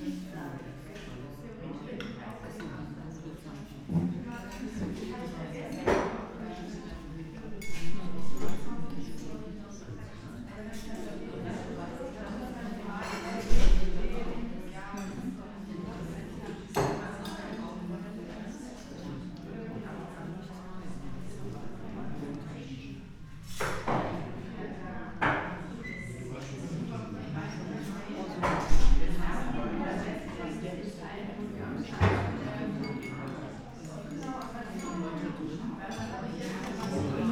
Bergmannstr., Werderscher Kirchhof, Berlin - inside cafe ambience
Sunday afternoon at Cafe Strauss, ambience inside cafe. The atmosphere within the cafe is quite special, it's located within the cemetery building, reminding on a chapel.
(Sony PCM D50, Primo EM172)